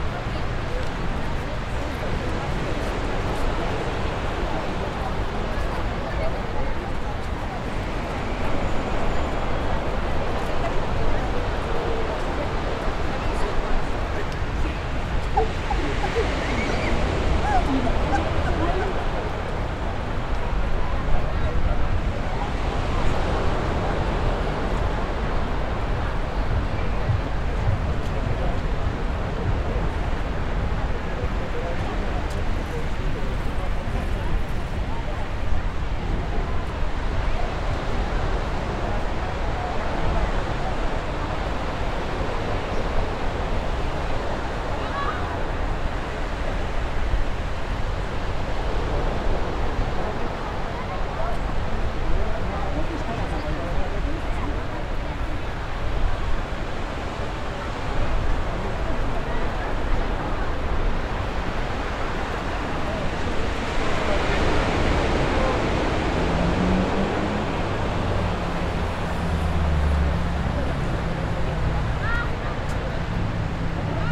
Kontxa Pasealekua, Donostia, Gipuzkoa, Espagne - CABINE ROYALE
CABINE ROYALE ST SEBASTIEN front of the océan
Captation ZOOM H6